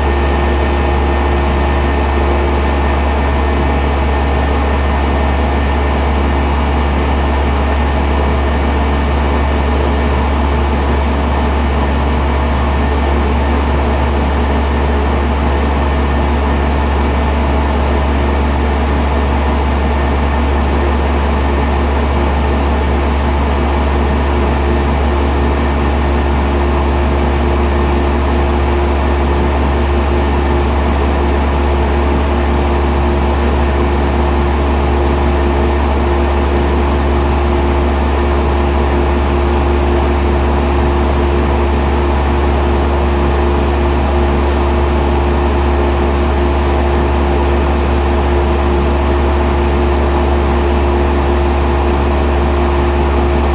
Montreal: Landsdowne Ave (Westmount) - Landsdowne Ave (Westmount)
equipment used: Marantz
Landsdowne street apartment building large central air conditioner unit